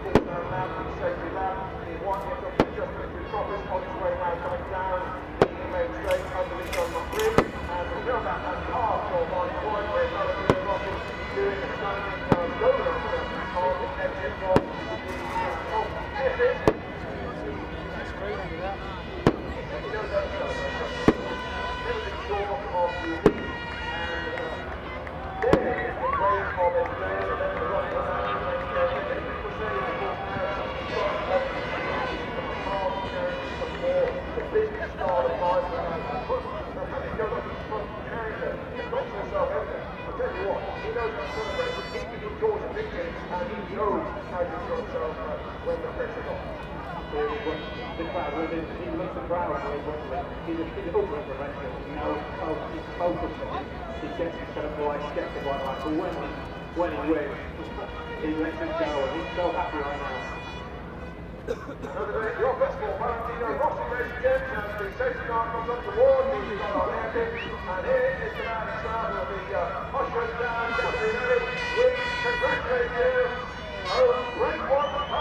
Castle Donington, UK - British Motorcycle Grand Prix 2003 ... moto grand prix ...
Race ... part two ... Starkeys ... Donington Park ... 990cc four strokes and 500cc two strokes ... race plus associated noise ... air horns ... planes flying into East Midlands airport ...
Derby, UK, 13 July 2003, 14:30